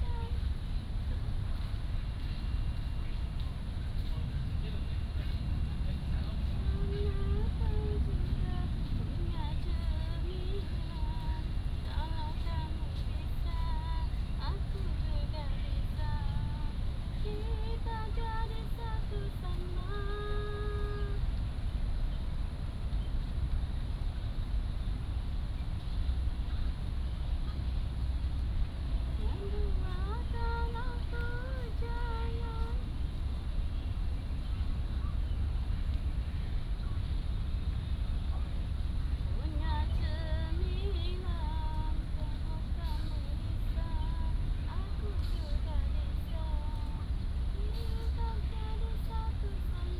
in the Park, Bird calls, Foreign care workers are humming